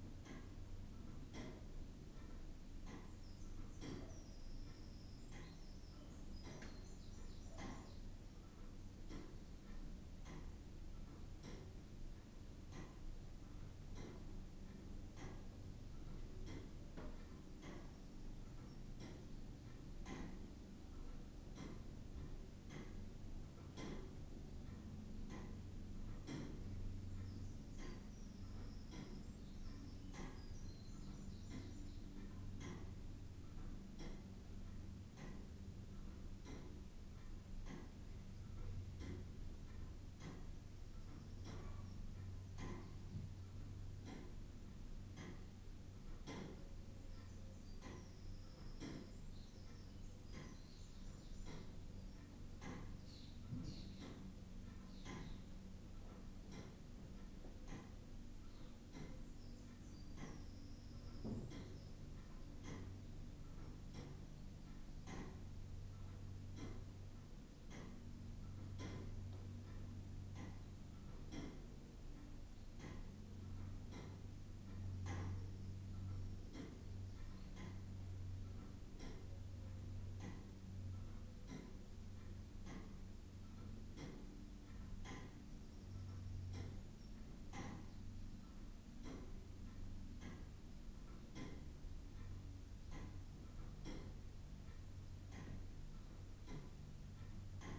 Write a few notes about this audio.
inside St Mary's parish church ... SASS ... background noise ... traffic ... bird calls ... song ... wren ... collared dove ... song thrush ...